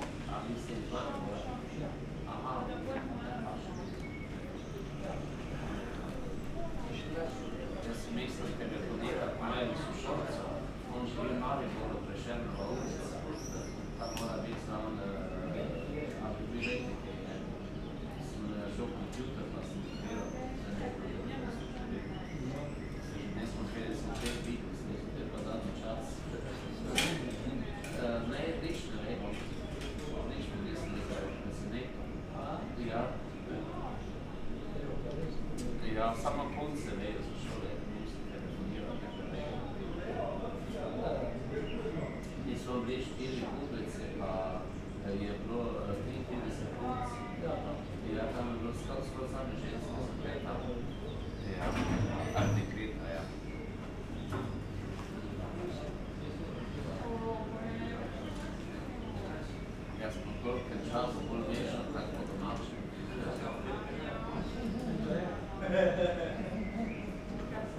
quiet country side station on a sunday afternoon. everybody is waiting for the train to Graz.
(SD702 AT BP4025)